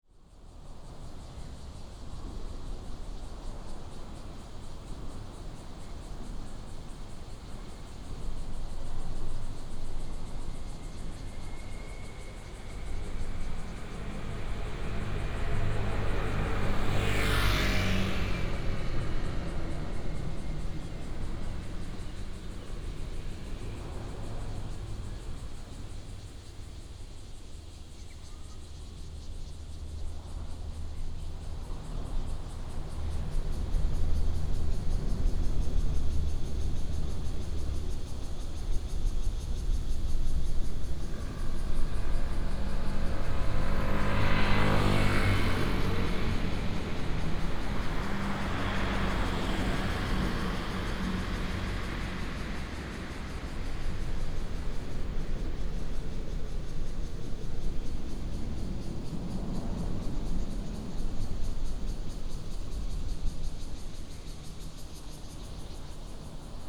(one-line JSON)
{"title": "竹21鄉道, Guanxi Township, Hsinchu County - Under the high-speed high road", "date": "2017-09-12 12:35:00", "description": "Under the high-speed high road, Traffic sound, Cicadas, Bird call, Binaural recordings, Sony PCM D100+ Soundman OKM II", "latitude": "24.79", "longitude": "121.12", "altitude": "141", "timezone": "Asia/Taipei"}